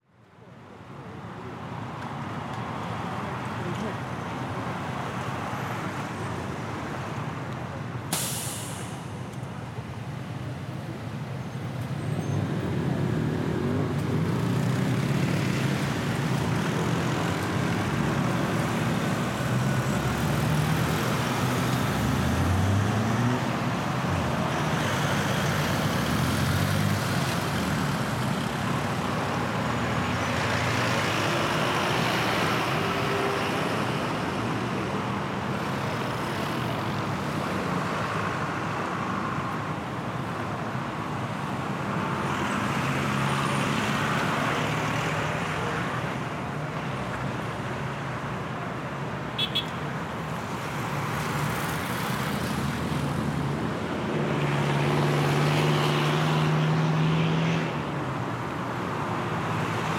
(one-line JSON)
{"title": "Av Calle, Bogotá, Colombia - Main street in North Bogota", "date": "2021-05-18 18:00:00", "description": "Main street of North Bogotá, this place has a busy environment, it's a road where cars, motorcycles, buses and people who pass by. You can hear the engines, braking, whistling of each passing vehicle, you can also feel how they pass in different directions. Recorded at 6pm with a zoom h8 recorder with stereo microphone, xy technique.", "latitude": "4.70", "longitude": "-74.04", "altitude": "2555", "timezone": "America/Bogota"}